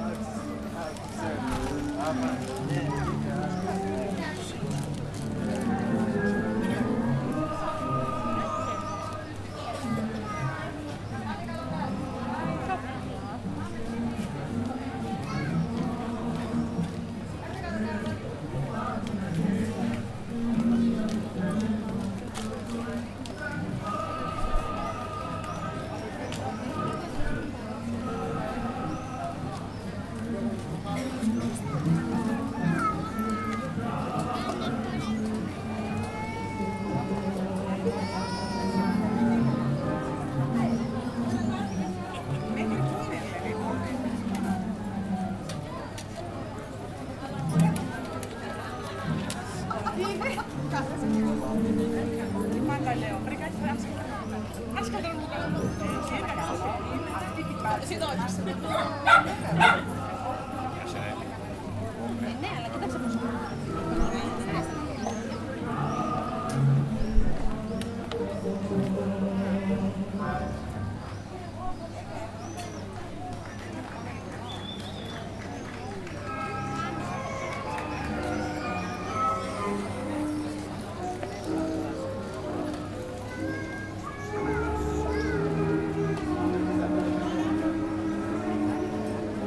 Thessaloniki, Greece - Navarinou pedestrian road
A dance academy did a happening in Navarinou pedestrian road in order to be advertised. Various dancers danced tango etc. A lot of people enjoyed the unexpected event!
Ελλάδα, European Union, 18 July 2013, 20:45